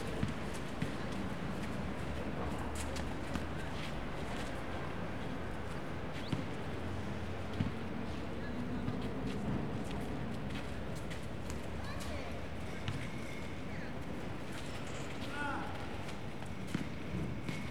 Plaça Josep M Folch i Torres, Barcelona, Barcelona, Spain - Basketball and Birds
People playing basketball below me in the park on a Saturday morning, while the birds (parakeets and pigeons) behind me eat grains that somebody gave them. In the second half of the recording you can hear a man pushing a shopping trolley of empty bottles along the pavement to the recycling bank, then dropping them in.
Recorded with ZOOM H4n.
6 December 2014